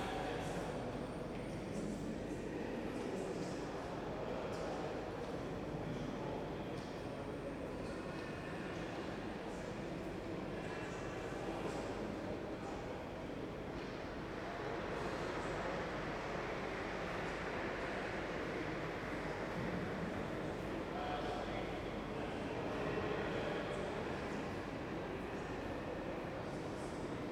Messe Berlin - elevator area
Messe Berlin during Linux Day, hall 7, elevator area